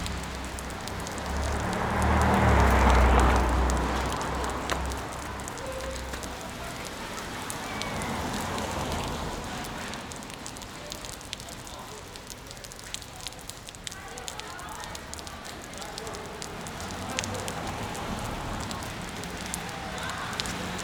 Woodward Ave, Ridgewood, NY, USA - Water dripping from the M train platform
Sounds of water dripping on the road from the elevated M train station (Forest Ave).
In a twisted turn of events, a car parks under the stream of water, changing the sound of the dripping water.